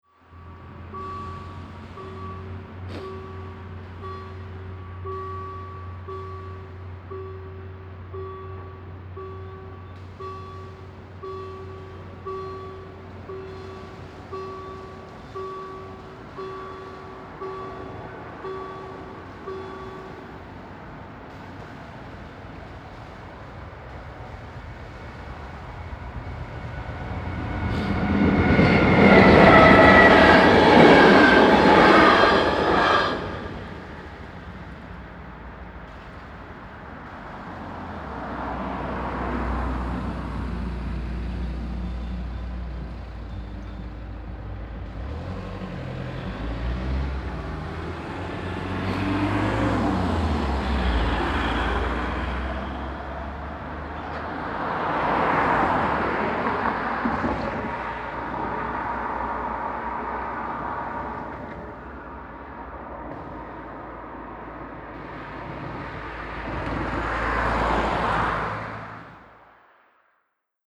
{"title": "Hautbellain, Ulflingen, Luxemburg - Hautbellain, railroad crossing with gates", "date": "2012-08-07 15:40:00", "description": "An einem beschrankten Bahnübergang. Der Klang des Warnsignals beim Schliesen der Schranken, die Vorbeifahrt eines Regionalzuges und die Weiterfahrt von Fahrzeug über den Bahndamm nach dem Öffnen der Schranken.\nAt a railroad crossing with gates. The sound of the warning signal while closing the gates. The passing by of a regional train and the traffic crossing the railway embankment after the gates open again.", "latitude": "50.15", "longitude": "5.98", "altitude": "476", "timezone": "Europe/Luxembourg"}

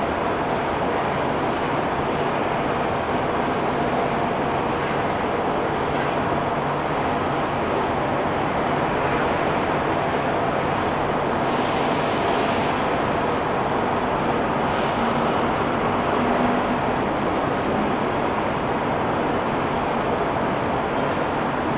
{"title": "William St S, Belfast, UK - Arthur Square", "date": "2020-03-27 14:20:00", "description": "Looking at the Spirit of Belfast, you will know you’re at a local stop for shopping. Most of the time there will be a performer or musician in this circle, grabbing the attention of shoppers, there will be your fast walkers, your slow walkers, those who zoom past you with their bicycle. On this day, nothing. Only a few instances of signs of civilization.", "latitude": "54.60", "longitude": "-5.93", "altitude": "9", "timezone": "Europe/London"}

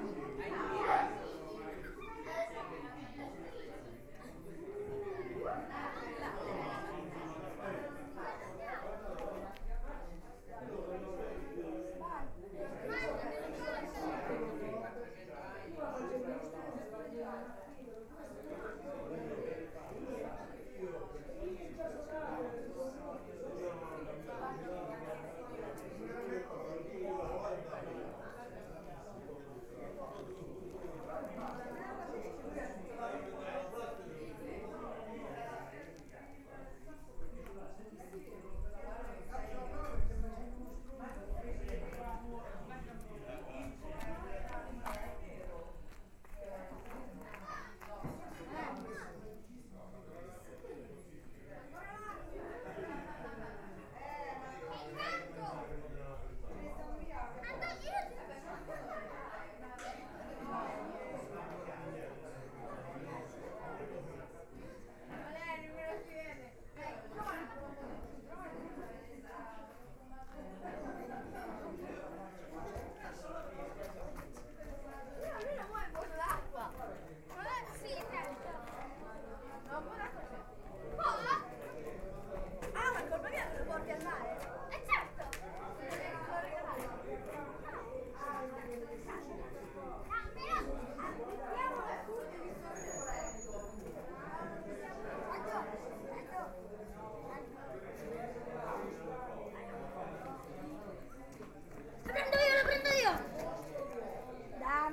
{"title": "Cantina Aurora - Convivio 3 #foodgallery", "date": "2013-09-07 14:59:00", "description": "#foodgallery\nApri bene la bocca - Convivio 3", "latitude": "42.93", "longitude": "13.76", "altitude": "157", "timezone": "Europe/Rome"}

